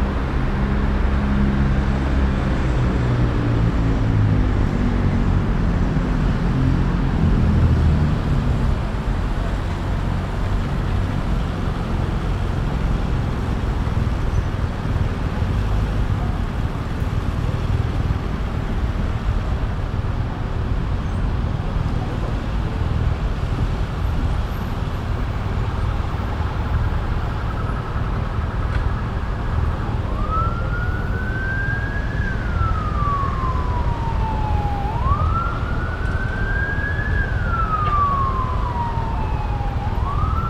Antwerpen, Belgium - Late afternoon traffic
Traffic on the Frankrijklei; everyone heading home after work.
Vlaanderen, België / Belgique / Belgien, 22 September